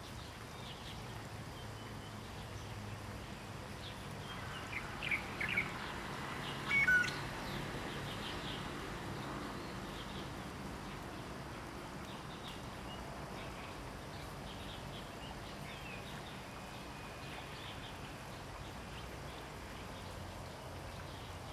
桃米巷, 南投縣埔里鎮桃米里 - Birds call
Birds singing
Zoom H2n MS+XY
Puli Township, 桃米巷31-1號, 17 September 2015